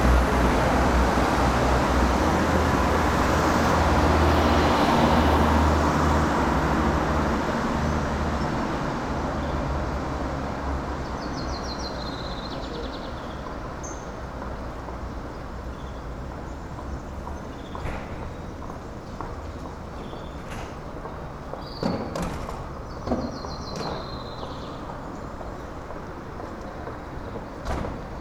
Manlleu, Barcelona, España - Passeig de Sant Joan
Passeig de Sant Joan
Barcelona, Spain, 23 May 2012